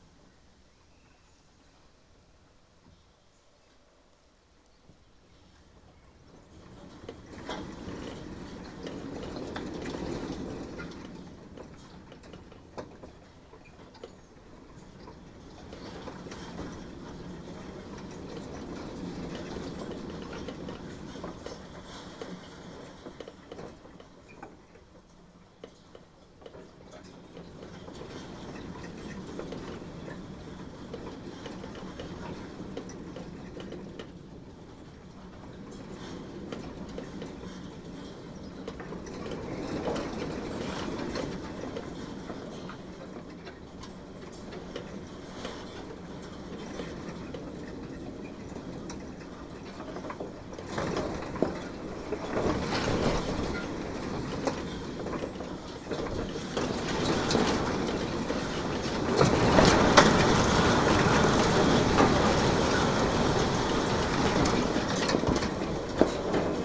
Hermankova ulica, Maribor, Slovenia - fence with vines and wind 1
this stretch of chain link fence was in direct contact with clinging vines that blew in the wind
16 June 2012